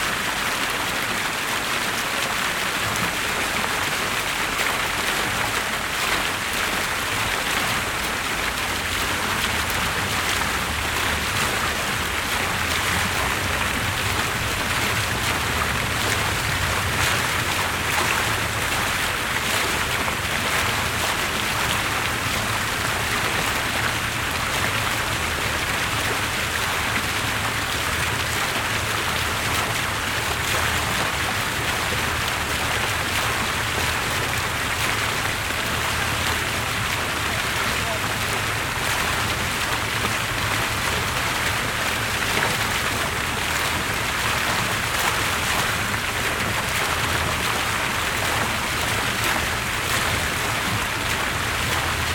Norrmalm, Stockholm, Suecia - Maskrosbollen fontän
So de l'aigua a la font.
Sound of the water in the fountain.
Sonido de agua en la fuente.